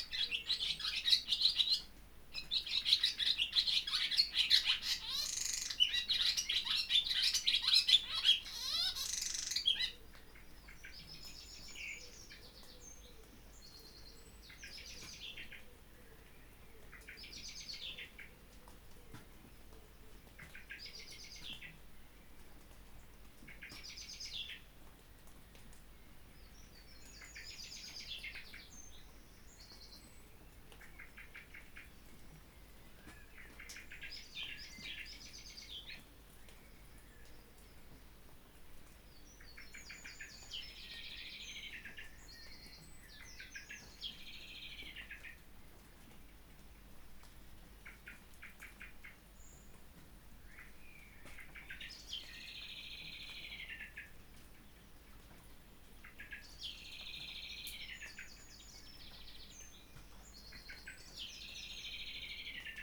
Under the shed ... in the rain ... starts with a swallow 'chattering' close to its nest ... then calls and song from ... blackbird and wren ... recorded with Olympus LS 14 integral mics ...